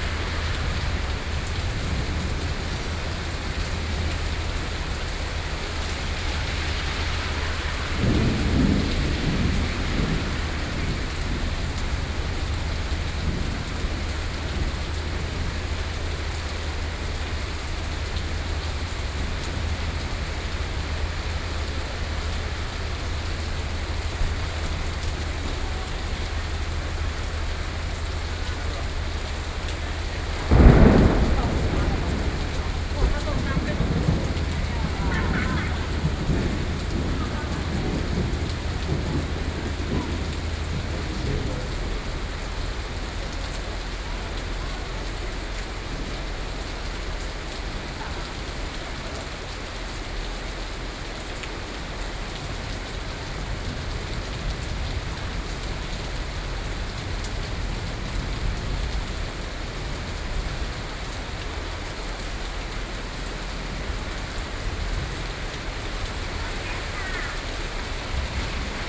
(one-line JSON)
{"title": "Zhongzheng District, Taipei - Thunderstorm", "date": "2013-07-06 15:23:00", "description": "Traffic Noise, Thunderstorm, Sony PCM D50, Binaural recordings", "latitude": "25.05", "longitude": "121.53", "altitude": "24", "timezone": "Asia/Taipei"}